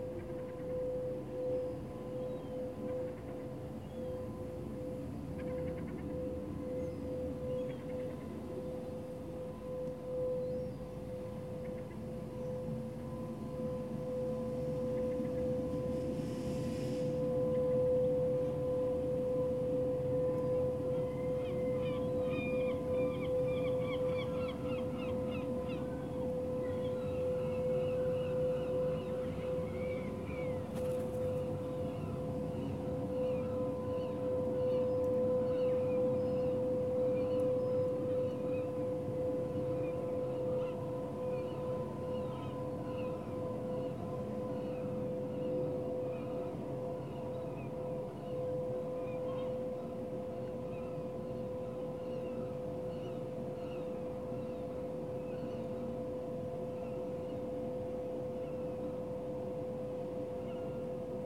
As a street cleaning machine passes down a nearby street, the seagulls overhead start calling.
Zoom H4n internal mics.
Rye, UK, 1 August 2016